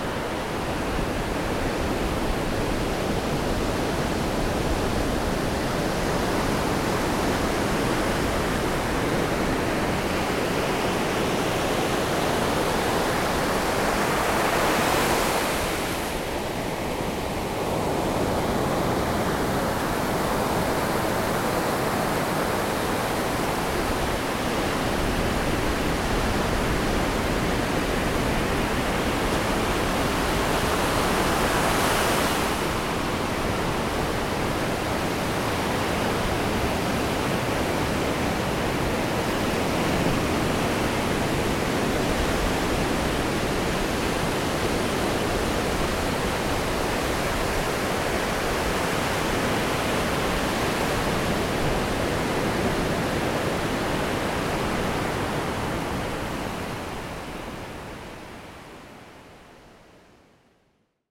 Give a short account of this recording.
Breaking waves (waist high surf) and spume early AM, sand beach, Silver Strand, Coronado CA. Recorded Zoom H2N with wind cover, WAVE.